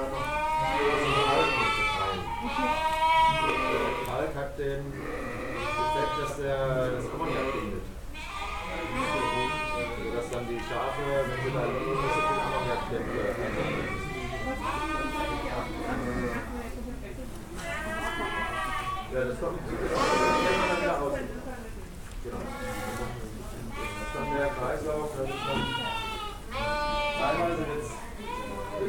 Altenberge, Germany - the advantages of hay milk...
…the farmer of “Entrup119” tells us about cheese production and the advantages of feeding hay to the sheep… while a multi-vocal choir of sheep is musically accompanying the farmer’s speech…
…we were visiting the farm as part of a workshop from a “one world conference”
14 March 2015